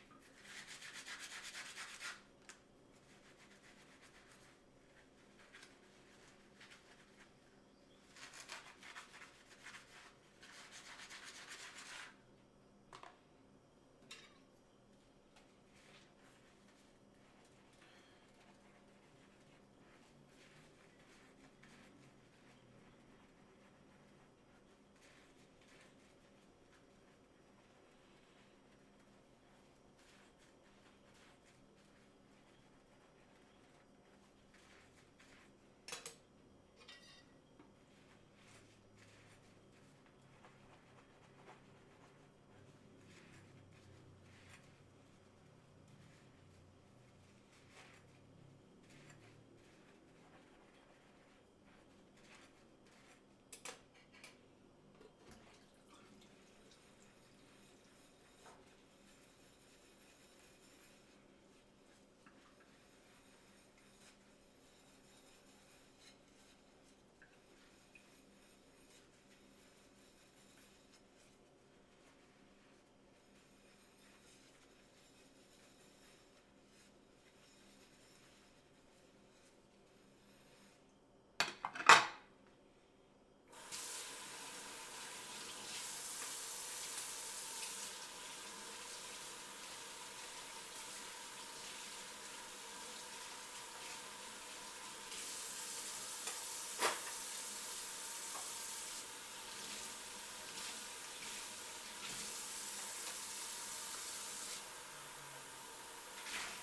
Av. Samuel Martins - Vila Arens II, Jundiaí - SP, 13202-251, Brasil - Dish washing at home
Dish washing on a sunday afternoon, quietly and alone at home. Water sound, sink sound, plates, silverware being handled and scrubbed, humming of the refrigerator, a little bit of footsteps towards the end. Recorded with Zoom H5 XYH-5 capsules.